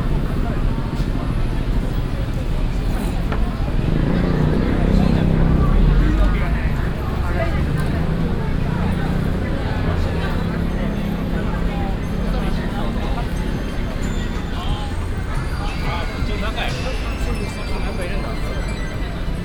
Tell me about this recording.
street atmosphere at akihabara district at noon daytime, international city scapes - social ambiences and topographic field recordings